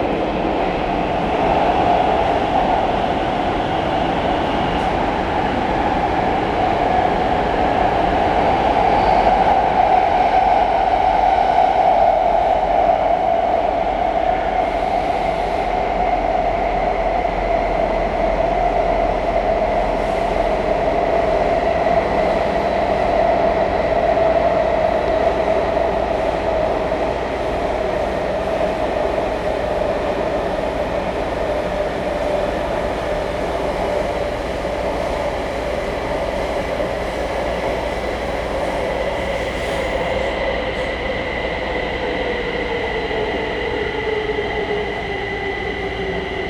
{
  "title": "Cianjhen, Kaohsiung - Take the MRT",
  "date": "2012-02-01 12:35:00",
  "latitude": "22.57",
  "longitude": "120.33",
  "altitude": "5",
  "timezone": "Asia/Taipei"
}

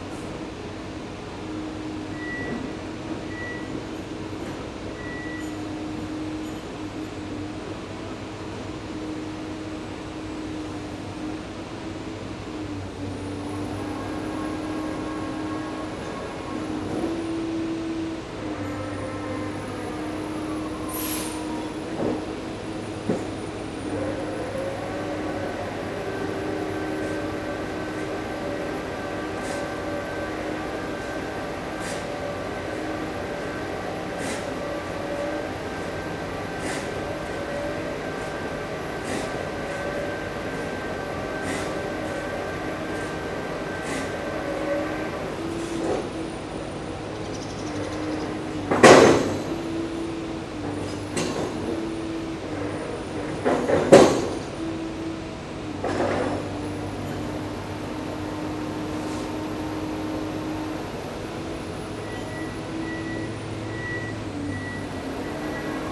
{
  "title": "Villa Wahnfried, Bayreuth, Deutschland - Villa Wahnfried",
  "date": "2013-05-28 15:50:00",
  "description": "Composer Richard Wagners home - Villa Wahnfried\nconstruction work",
  "latitude": "49.94",
  "longitude": "11.58",
  "altitude": "346",
  "timezone": "Europe/Berlin"
}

